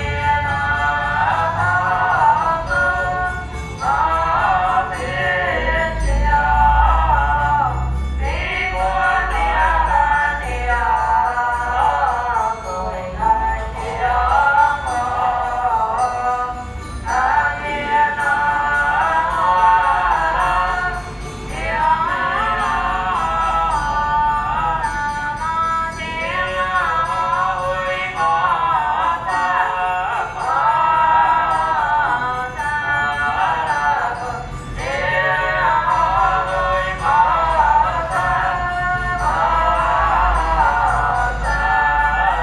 {
  "title": "Wenhua Rd., Yingge Dist., New Taipei City - Traditional temple rituals",
  "date": "2011-11-29 14:01:00",
  "description": "Traditional temple rituals, In the square in front of the temple\nZoom H4n XY+Rode NT4",
  "latitude": "24.95",
  "longitude": "121.35",
  "altitude": "50",
  "timezone": "Asia/Taipei"
}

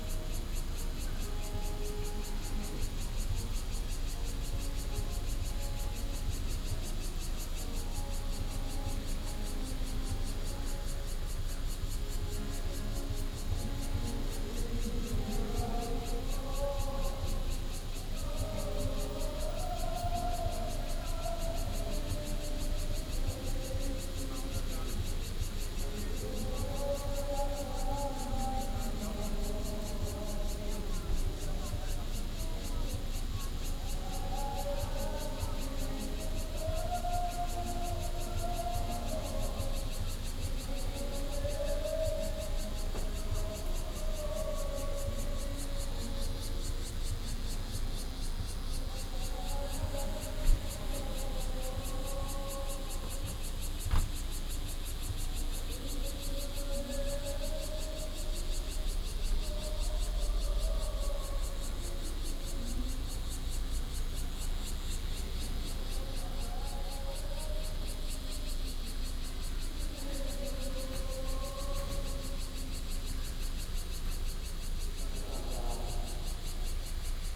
{
  "title": "KaiNan High School of Commerce and Industry - soundwalk",
  "date": "2013-07-20 18:37:00",
  "description": "walking in the street, Zoom H4n+ Soundman OKM II",
  "latitude": "25.04",
  "longitude": "121.52",
  "altitude": "13",
  "timezone": "Asia/Taipei"
}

